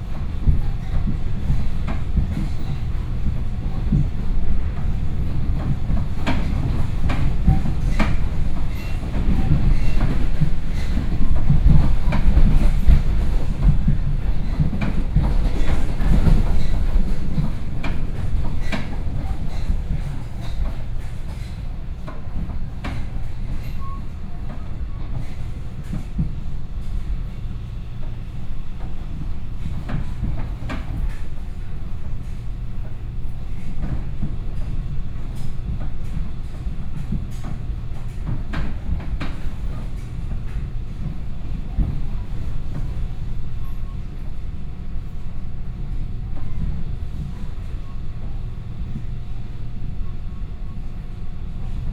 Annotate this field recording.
from Changhua Station to Chenggong Station, Binaural recordings, Sony PCM D50+ Soundman OKM II